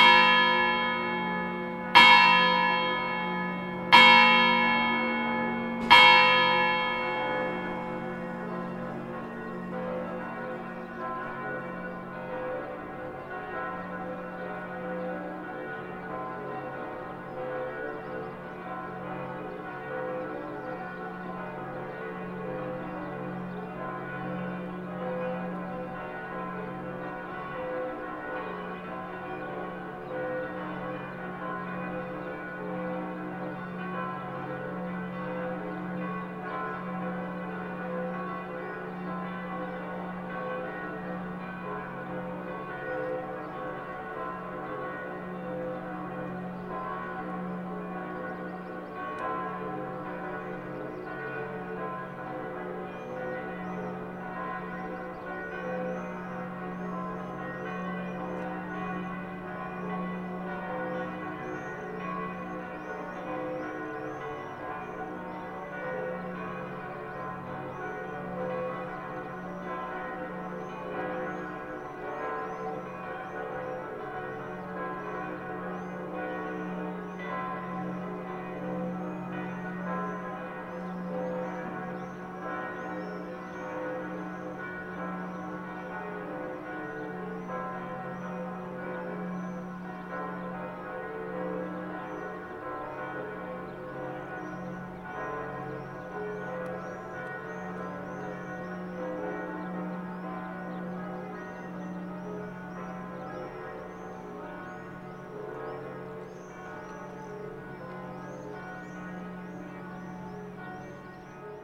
Schloßberg, Graz, Österreich - Car-alarm, clocktower and church-bells at 7 oclock
The internal condenser-mics of my fieldrecorder (H4N Pro) were used. The device stood on the stonewall around the clocktower on a small stand and pointed south-west.
It was a sunny morning, which was particularly silent at first, because of the corona-virus lockdown. All of a sudden the alarm of a car standing somewhere far away began to beep loudly, and the noise overlaid the whole area around the "Schlossberg" and "Hauptplatz". Shortly after the alarm had stopped the curch-bells of Graz began to ring at 7 o'clock in the morning, together with the bells of the clocktower immediately behind me. After the churches went quiet again, I stopped the recording.